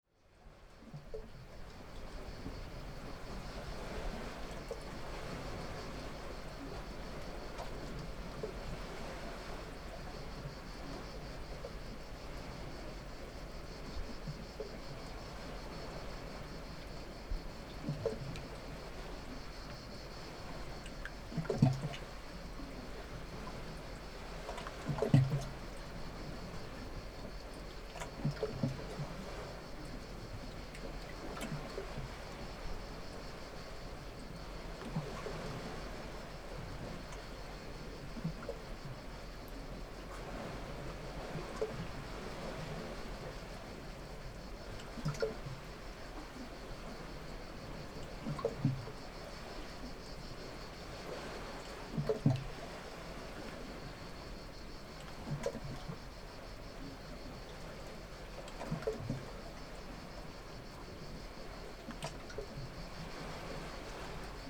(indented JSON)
{
  "title": "near Pachia Ammos Beach, Samothraki, Griechenland - water cave",
  "date": "2019-06-30 12:00:00",
  "description": "inside a small cavity in the rocks, cliffs near pachia ammos beach samothraki",
  "latitude": "40.39",
  "longitude": "25.59",
  "altitude": "13",
  "timezone": "Europe/Athens"
}